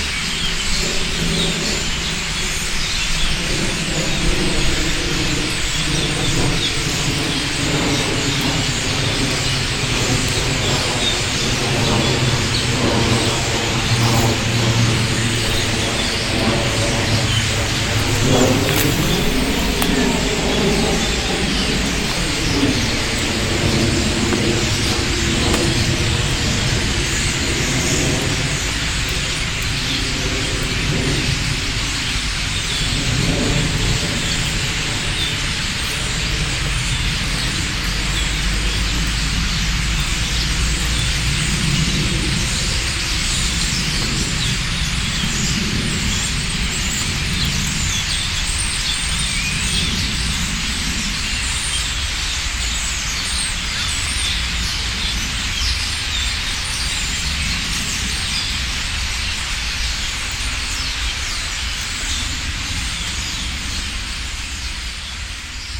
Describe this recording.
a huge amount of birds gathering in the trees in autuum, soundmap d: social ambiences/ listen to the people in & outdoor topographic field recordings